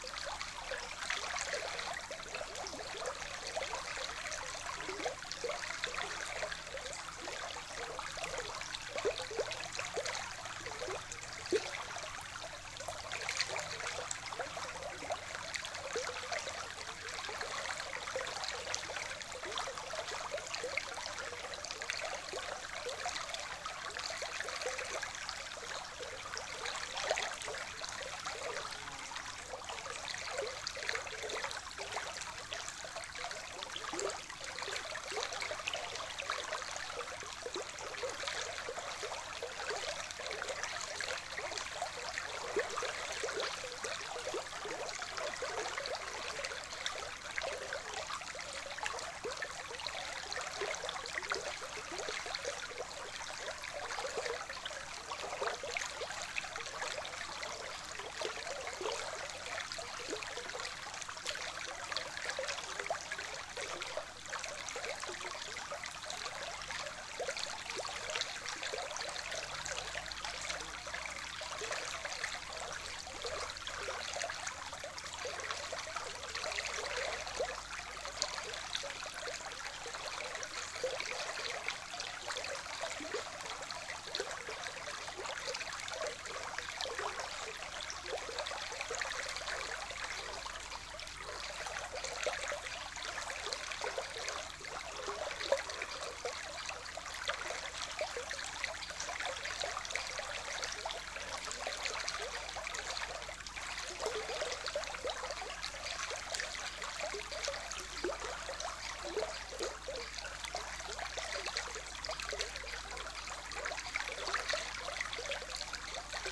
stones in the river add more murmurring sound...
Utenos apskritis, Lietuva, 30 August